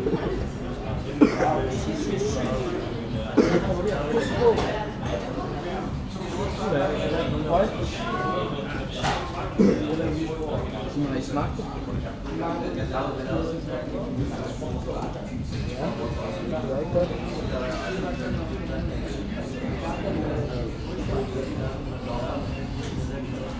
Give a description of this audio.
Into the kebab snack restaurant, a lot of young people are discussing and joking. A teenager is phoning just near the microphones, inviting a friend to come to the barbecue.